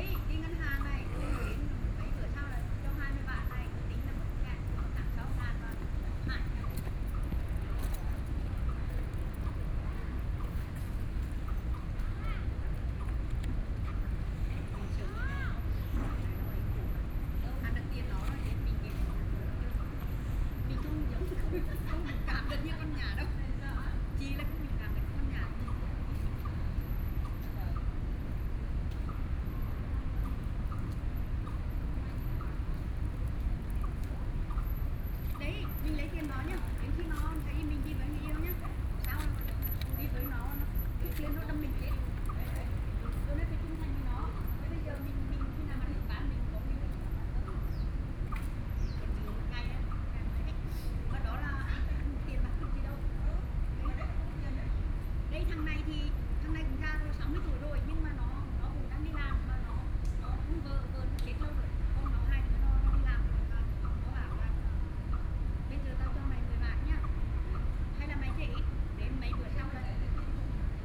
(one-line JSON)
{"title": "Taipei Botanical Garden - Hot and humid afternoon", "date": "2013-09-13 16:47:00", "description": "Hot and humid afternoon, Foreign caregivers and people coming and going, Sony PCM D50 + Soundman OKM II", "latitude": "25.03", "longitude": "121.51", "altitude": "9", "timezone": "Asia/Taipei"}